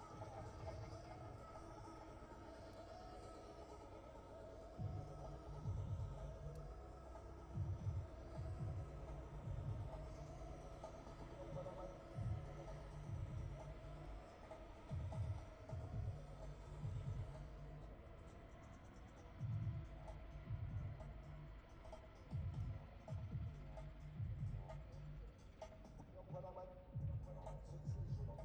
Towcester, UK - british motorcycle grand prix 2022 ... moto two ...
british motorcycle grand prix 2022 ... moto two free practice three ... bridge on wellington straight ... dpa 4060s clipped to bag to zoom h5 ... plus disco ...
England, United Kingdom, 6 August 2022